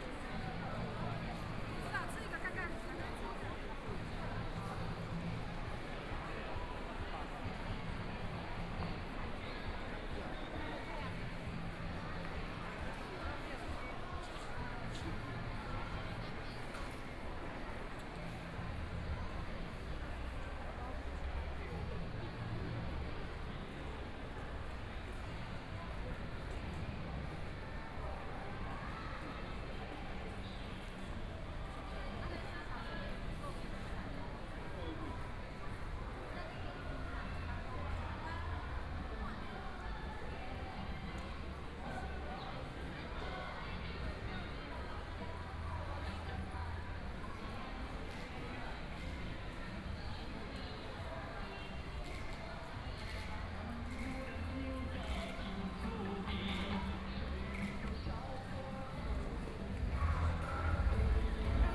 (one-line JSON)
{"title": "Taipei EXPO Park, Taiwan - Soundwalk", "date": "2014-02-08 15:46:00", "description": "Walking through the bazaar, Various shops voices, Binaural recordings, Zoom H4n+ Soundman OKM II", "latitude": "25.07", "longitude": "121.52", "timezone": "Asia/Taipei"}